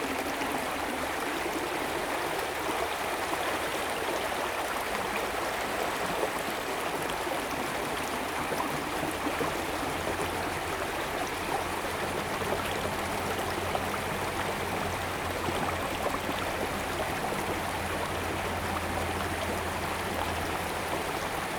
Irrigation waterway, The sound of water, Very hot weather
Zoom H2n MS+ XY